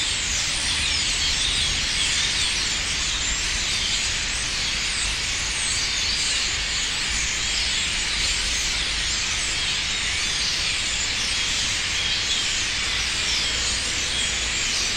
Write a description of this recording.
Starlings stayed active all the night here, at least during the several nights I could check it. Why they were so numerous and why they settled down in Florence I do not know for the moment. Jiri Lindovsky